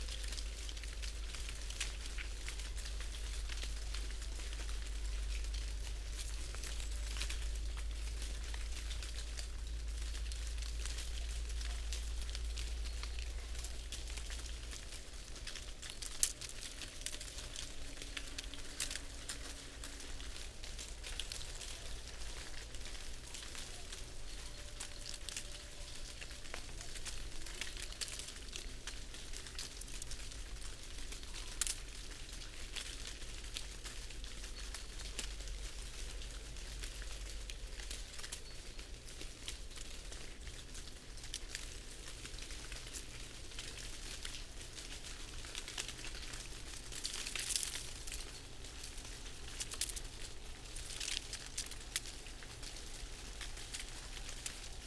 falling sycamore leaves ... parabolic ... very cold and still morning ... the dessicated leaves falling in almost a torrent ... bird calls ... pheasant ... great tit ... blue tit ... blackbird ... chaffinch ... crow ... background noise ...